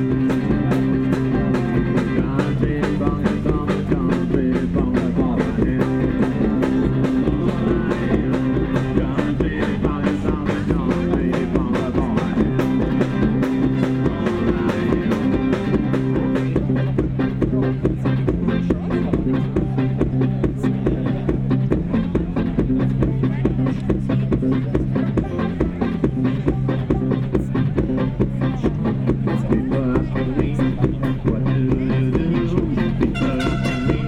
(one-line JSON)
{"title": "berlin: hobrechtbrücke - the city, the country & me: country & reggae band", "date": "2012-06-21 22:19:00", "description": "country & reggae band during fête de la musique (day of music)\nthe city, the country & me: june 21, 2012", "latitude": "52.49", "longitude": "13.43", "altitude": "41", "timezone": "Europe/Berlin"}